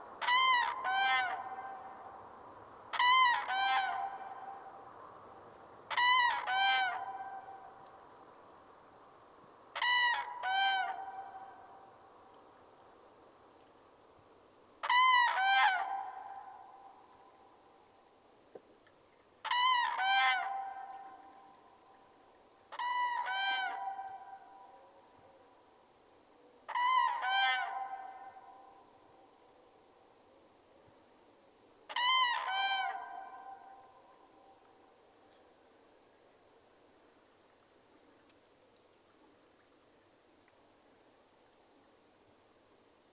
Vyzuonos, Lithuania, the cranes
the cranes in the area of river Sventoji. recorded with diy parabolic mic
Utenos apskritis, Lietuva, 26 March 2020